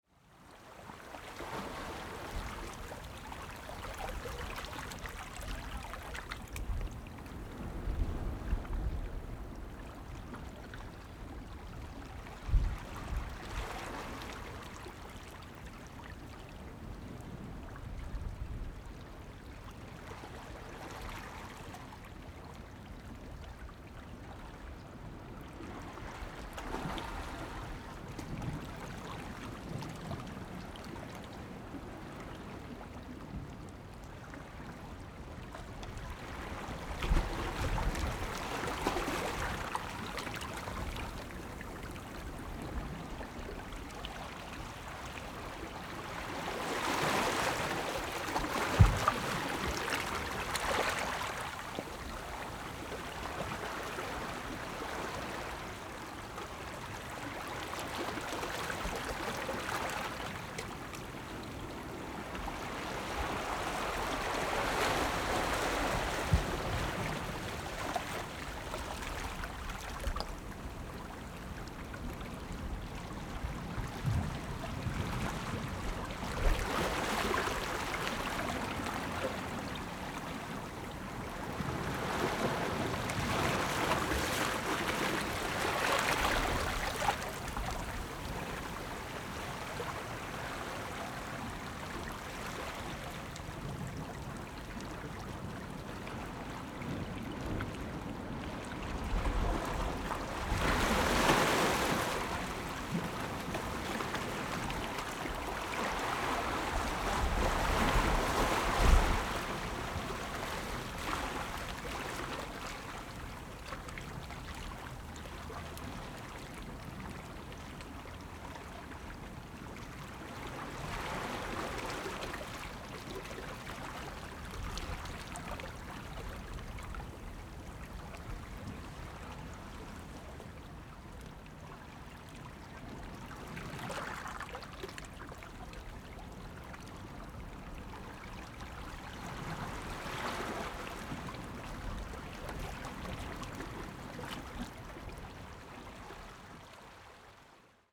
風櫃里, Penghu County - Waves and tides
Wave, On the rocky coast
Zoom H6 + Rode NT4